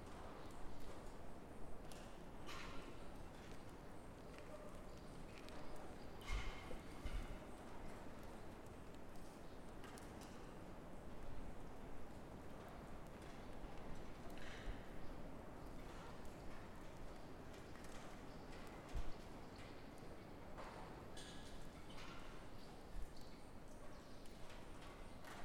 The last recording inside the great hall, the conditions were quite difficult since the wind was constantly blowing on this peak...
Стара Загора, Бългaрия, 16 July 2019, ~13:00